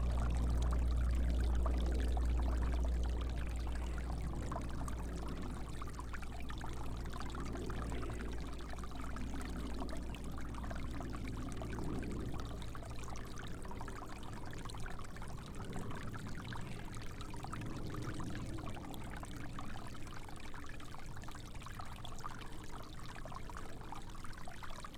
Percy Warner Park, Nashville, Tennessee, USA - Stream Percy Warner Park
Recording from stream at Beach Grove Picnic Area in Percy Warner Park
Tennessee, United States